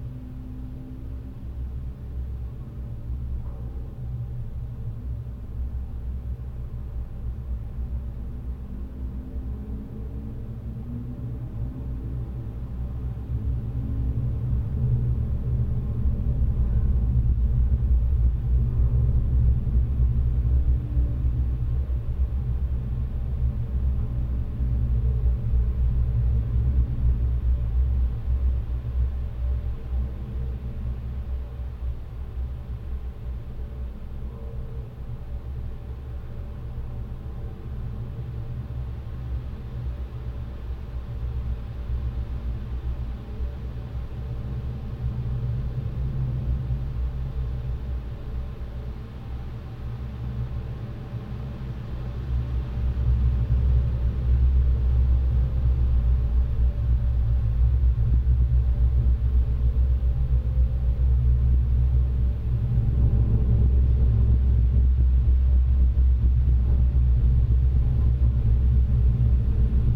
water pumping station. geophone on metallic structure and very small microphones inside. heavy amplified sounds.
Zarasų rajono savivaldybė, Utenos apskritis, Lietuva, 26 September